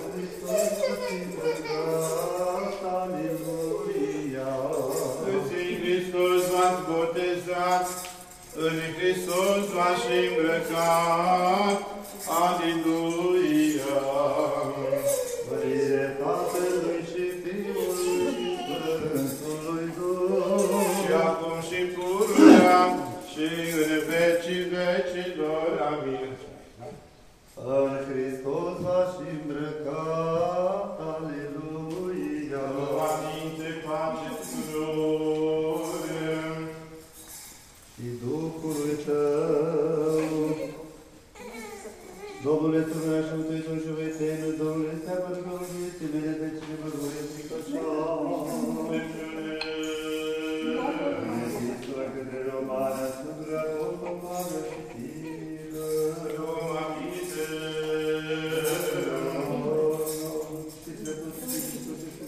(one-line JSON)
{"title": "Botez, Largu", "description": "Botez=Baptism by the Rumanian Orthodox", "latitude": "44.97", "longitude": "27.14", "altitude": "53", "timezone": "Europe/Berlin"}